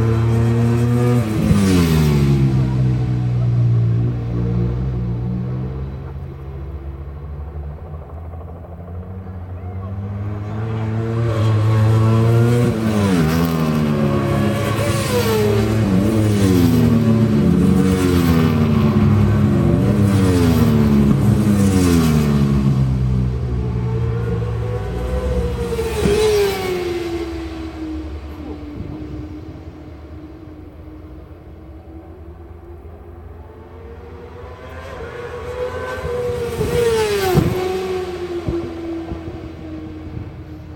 West Kingsdown, UK - World Superbikes 2002 ... Qual(contd)
World Superbikes 2002 ... Qual(contd) ... one point stereo mic to minidisk ...